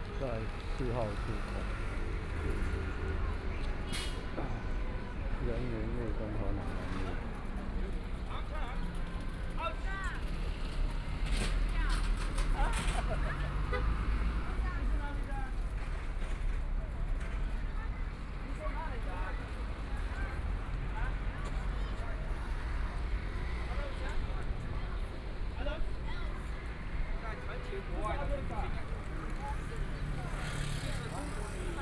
Renmin Road, Shanghai - street sound
Walk from the subway station near the old community near, Traffic Sound, Binaural recording, Zoom H6+ Soundman OKM II